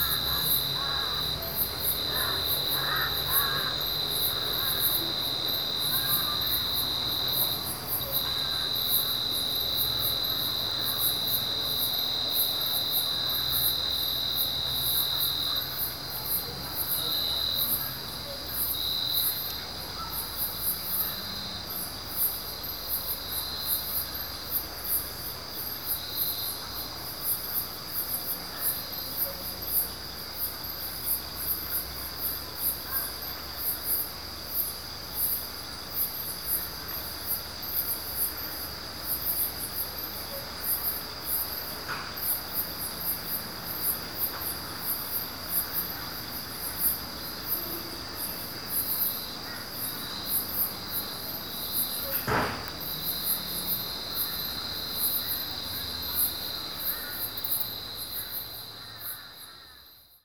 Tanah Rata, Pahang, Malaysia - drone log 20/02/2913
evening ambience above the village
(zoom h2, binaural)